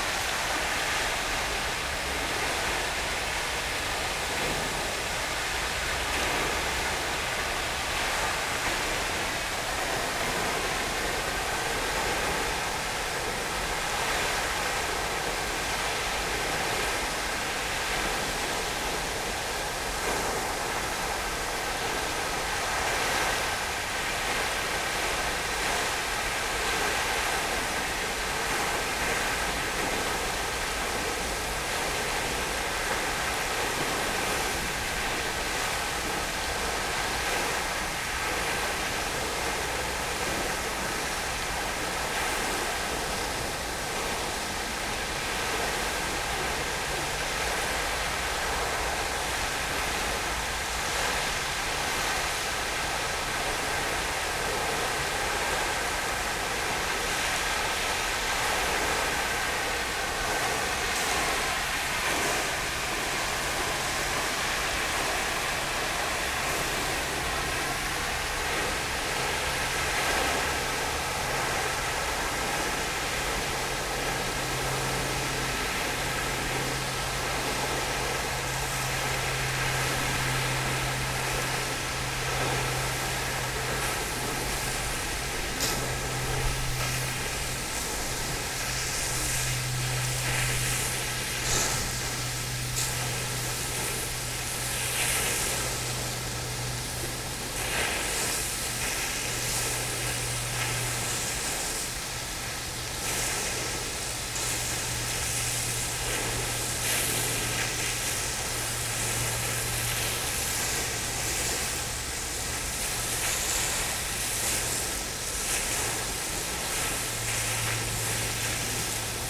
Emperor Fountain ... Chatsworth House ... gravity fed fountain ... the column moves even under the slightest breeze so the plume falls on rocks at the base or open water ... or both ... lavalier mics clipped to sandwich box ... voices and background noises ...
Bakewell, UK, November 2016